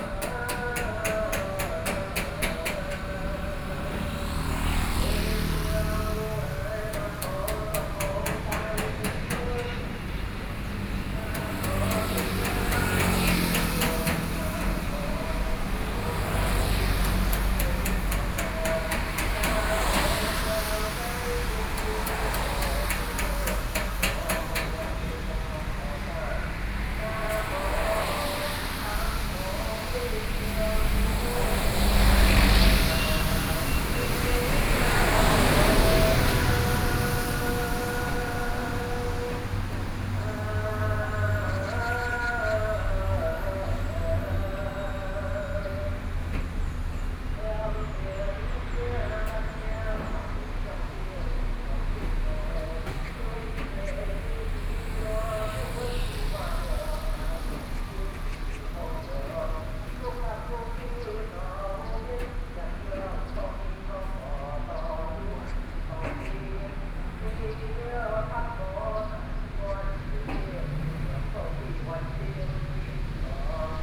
Xinsheng N. Rd., Taipei City - ghost festival
ghost festival, Standing on the roadside, Sound Test, Sony PCM D50 + Soundman OKM II